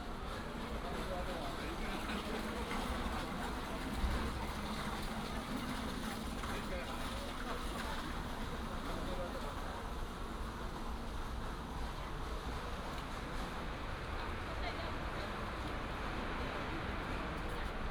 五雲宮活動中心, 白沙屯 Tongxiao Township - In the parking lot
Matsu Pilgrimage Procession, Crowded crowd, Fireworks and firecrackers sound
Miaoli County, Tongxiao Township, 白西68-1號, 9 March 2017, ~11:00